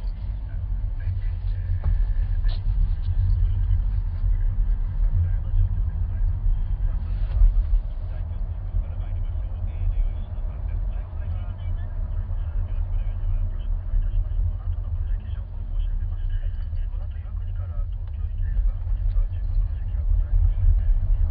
Nakajimachō, Naka Ward, Hiroshima, Japon - Hiroshima taxi ride to the Shinkansen
Record of a taxi ride from the Hiroshima Peace Memorial Museum to the Shinkansen Railway station
広島県, 日本